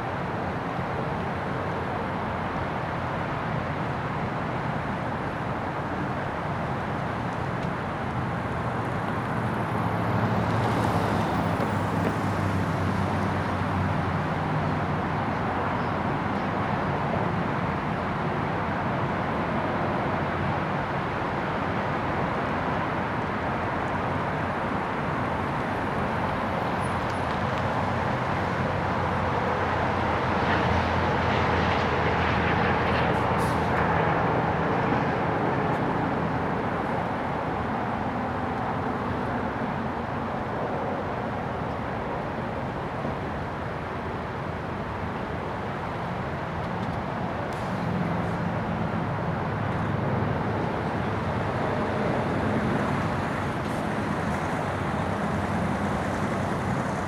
Rest Area No. 22 I-75 Southbound, Monroe County, GA, USA - Rest Stop Ambiance
A recording of a rest stop in which cars and trucks can be heard pulling in and out. Given the close proximity to the highway, the roar of traffic is constant. Some minor processing was done in post.
[Tascam Dr-100mkiii, on-board uni mics]